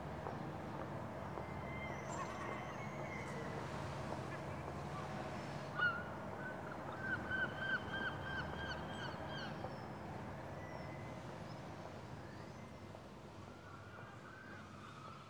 Place de l'église Notre Dame de Croaz-Batz.
Dans la ville immobile, le passage d'un scooter réveille l'espace.
Roscoff, France, 6 July 2011, 08:30